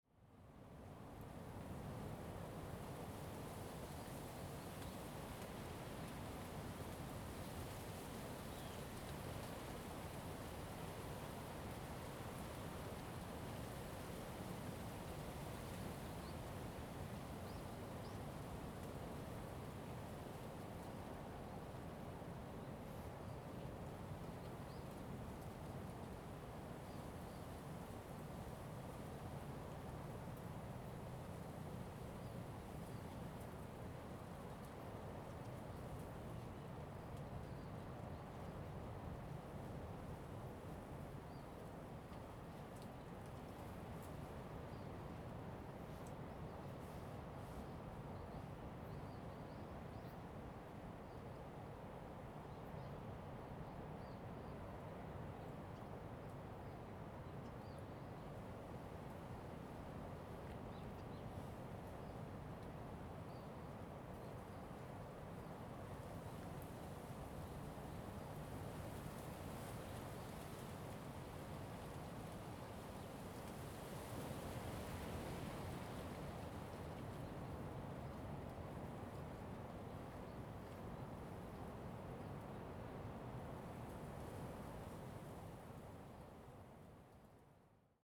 小長城, Lüdao Township - the wind and the waves
Forest, the wind, Sound of the waves
Zoom H2n MS +XY
Lyudao Township, Taitung County, Taiwan, 30 October 2014, 16:19